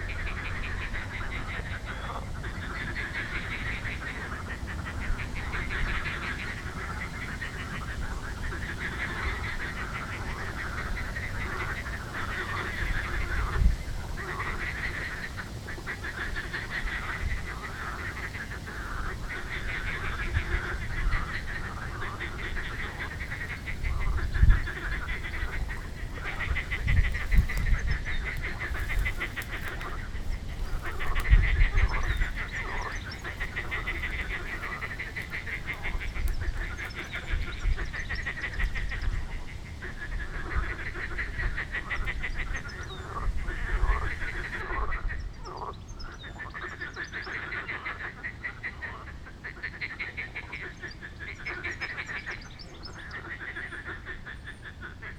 Liben Docks flooded - Frogs feast
During the flood the voices of frogs where reaching the bridge..
Česko, European Union